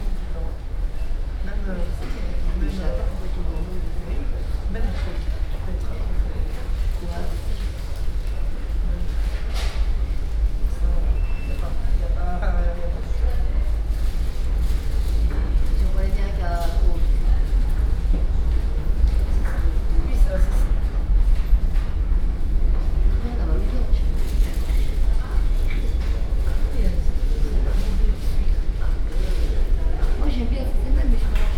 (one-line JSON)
{"date": "2008-03-07 07:42:00", "description": "Brussels, Midi Station, homeless conversation", "latitude": "50.84", "longitude": "4.34", "altitude": "28", "timezone": "Europe/Brussels"}